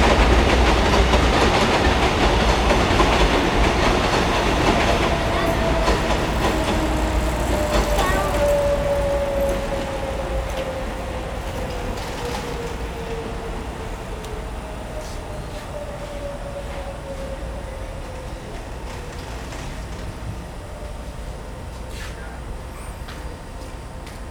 Sanmin, Kaohsiung - Train traveling through
左營區 (Zuoying), 高雄市 (Kaohsiung City), 中華民國, 1 February 2012